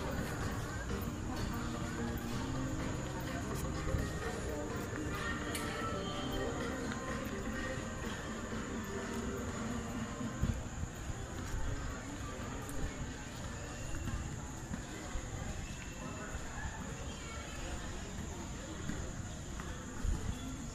ambiente sonoro en el parque de la cuarta etapa de la esperanza en el que se realizan diariamente actividades recreo deportivas.
Villavicencio, Meta, Colombia